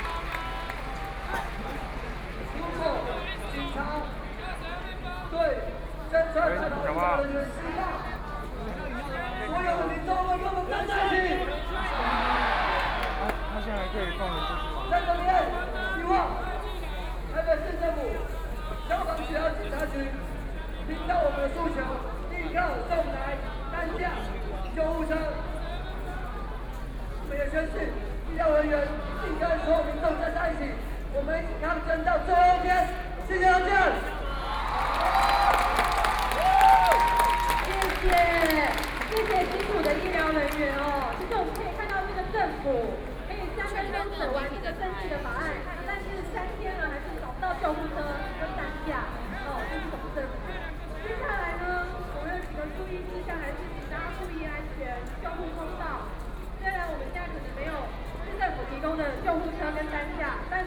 Protest, University students gathered to protest the government
Binaural recordings
Zhongzheng District, Zhènjiāng Street, 5號3樓, 20 March 2014